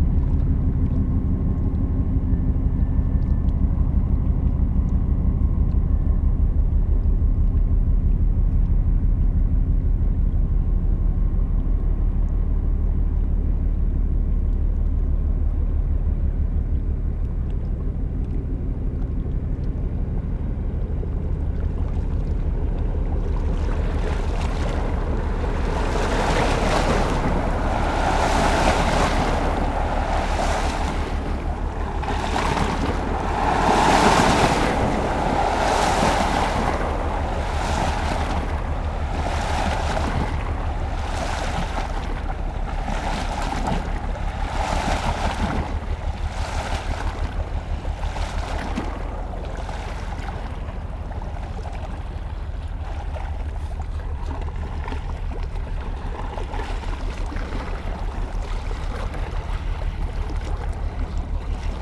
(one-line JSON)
{"title": "Quevillon, France - Boats", "date": "2016-09-18 12:50:00", "description": "Three industrial boats are passing by on the Seine river, the Bangkok, the Jasmine C and the Orca. All these boats are going to Rouen industrial harbor.", "latitude": "49.42", "longitude": "0.94", "altitude": "2", "timezone": "Europe/Paris"}